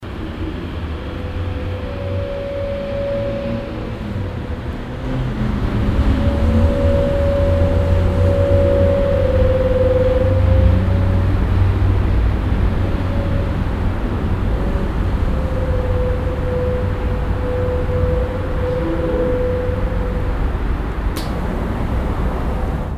erkrath, kreuzstrasse, st. johannes, mittagsglocken - erkrath, st. johannes kirche, turmheulen
windspiel und verkehrsdröhnen im glockenturm, mittags
soundmap nrw: social ambiences/ listen to the people - in & outdoor nearfield recording